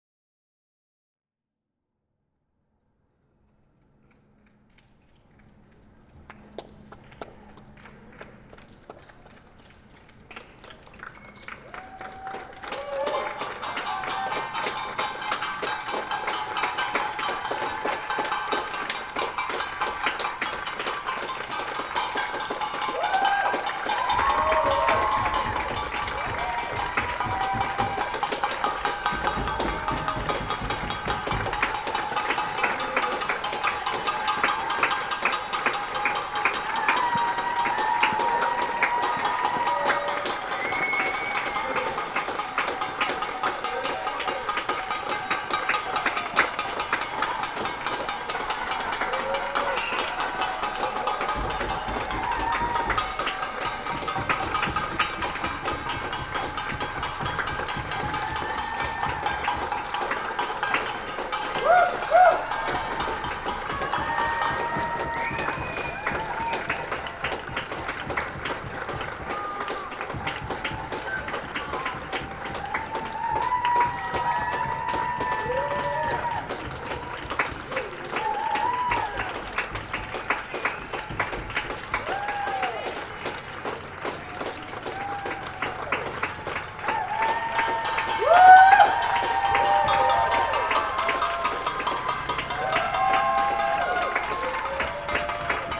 158 Tudor Road - Clapping In Support Of NHS Workers 8pm - 02.04.20, Tudor Road, Hackney, London.
The whole country went outside at 8pm this evening (and the same time last Thursday) to clap and make some noise in support of workers in the National Health Service. With many thanks and love to all who are risking their own health and lives to take care of those amongst us who are sick during this time of Pandemic.